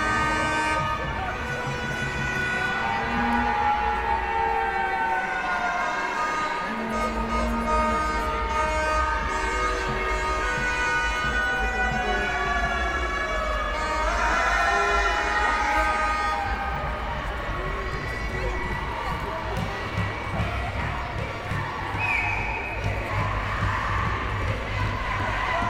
Mons, Belgium - K8strax race - Kallah girl scouts
Because it's us and we are proud to be like that ! Every year, scouts make a very big race, using cuistax. It's a 4 wheels bicycle, with two drivers. The race was located in the past in the city of Court-St-Etienne ; now it's in Mons city. The recording begins with horns. After, 2:45 mn, the Kallah guides (understand the river Kallah girl scouts) shout and sing before the race. I travel along them. Everybody is very excited to be here. The race is called k8strax. Its a codename for thighs + hunt down.